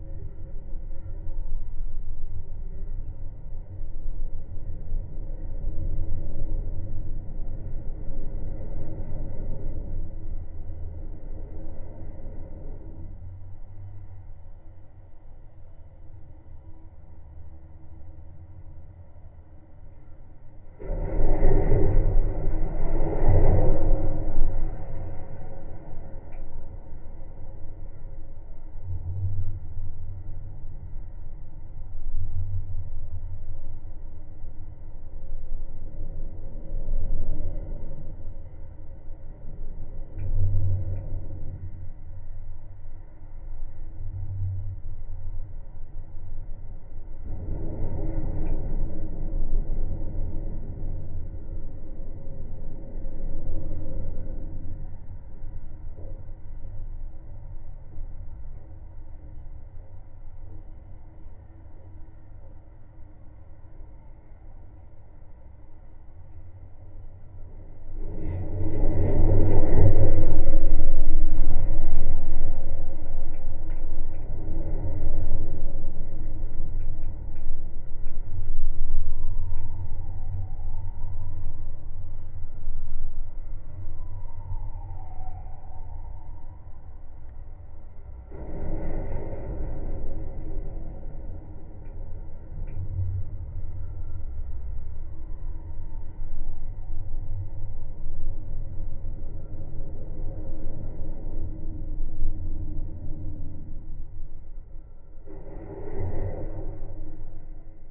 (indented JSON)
{
  "title": "Vilnius, Lithuania, Liubertas Bridge vibrations",
  "date": "2021-03-03 14:00:00",
  "description": "Bridge listened through its metallic constructions. Contact microphones and geophone.",
  "latitude": "54.69",
  "longitude": "25.26",
  "altitude": "80",
  "timezone": "Europe/Vilnius"
}